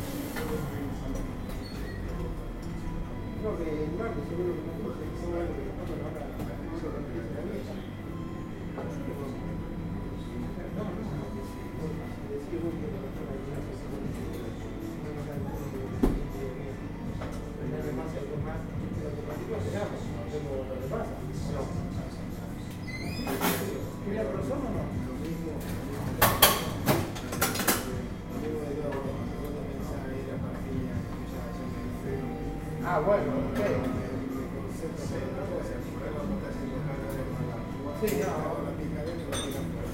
café sur, inside - Köln, café sur, inside
neighbourhood café, south cologne, may 30, 2008. - project: "hasenbrot - a private sound diary"